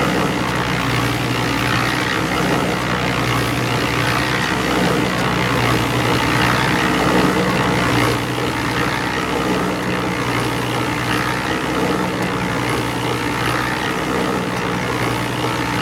R. Vinte e Cinco de Junho, Cachoeira - BA, 44300-000, Brasil - Betoneira - Concrete Mixer
Betoneira (máquina de misturar concreto) na obra da rua Vinte e Cinco de Junho.
Concrete Mixer in the Vinte e Cinco de Junho street construction.